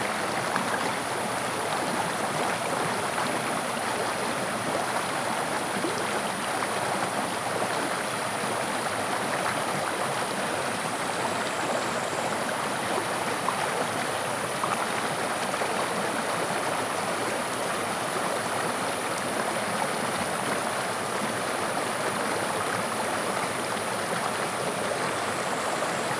Zhonggua River, Puli Township - The sound of the river
The sound of the river
Zoom H2n MS+XY +Spatial audio